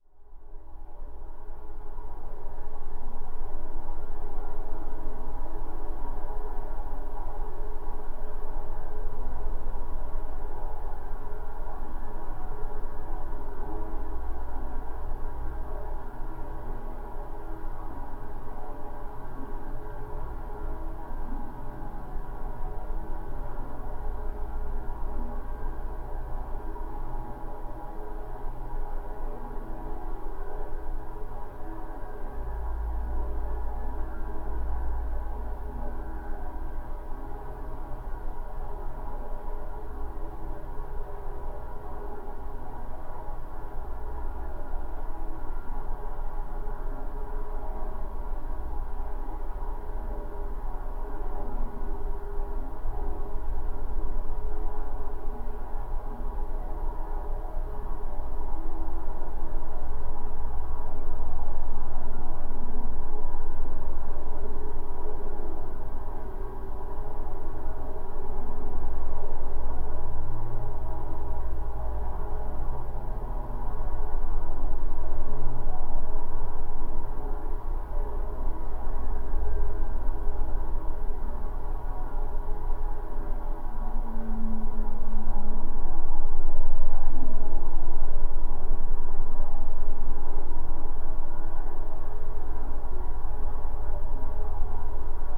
Geophone on snall bridge rails.
Utenos apskritis, Lietuva, 26 October 2020